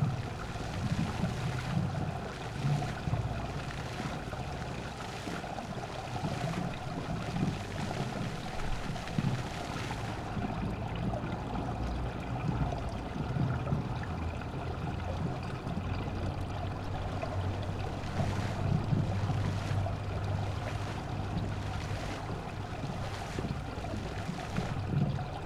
Utena, Lithuania, work of fountain
Utenos rajonas, Utenos apskritis, Lietuva, 21 July, 18:35